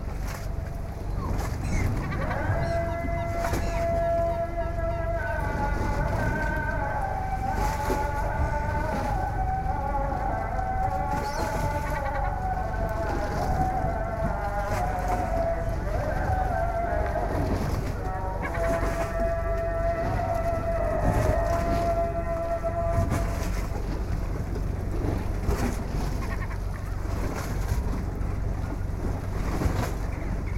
Bosphorus sciabordio
Anadolukavagi, a small fishing village. Lapping of the waves, seagulls, the song of the muezzin
31 December 2010